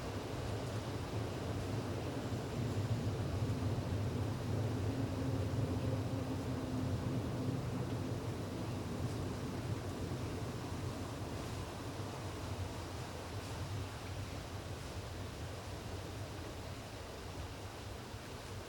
Dresden Heide - Dresden Forrest + Power Plant
Recorded with Zoom H5. Placed just off a walking path.
There is a bird singing a few songs and some other animal making some 'wood knocking' type of sound.
Distant traffic, train and power plant sounds.
Dresden, Germany, 4 August